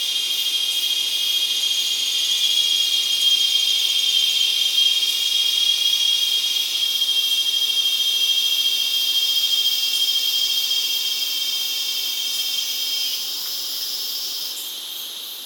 Iracambi - twilight
recorded at Iracambi, a NGO dedicated to protect and grow the Atlantic Forest
15 January 2017, 19:08